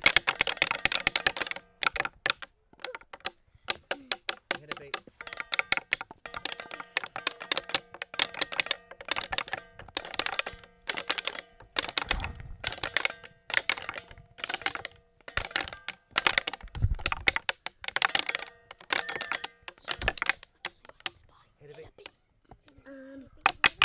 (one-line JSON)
{"title": "Piddle Valley School - Drumming in the woods", "date": "2015-07-15 14:30:00", "description": "Children record others drumming in a nature band with twigs and branches on tree stumps in the forest school.\nRecorded using an H4N zoom recorder and NTG2 Rode microphone.\nSounds in Nature workshop run by Gabrielle Fry.", "latitude": "50.79", "longitude": "-2.42", "altitude": "103", "timezone": "Europe/London"}